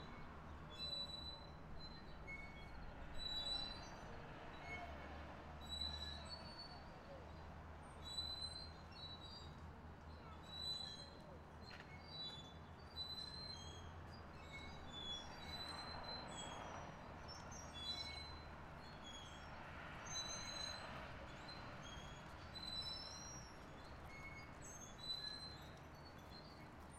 Rijeka, Croatia, Morning Swing - Morning Swing
1 August 2012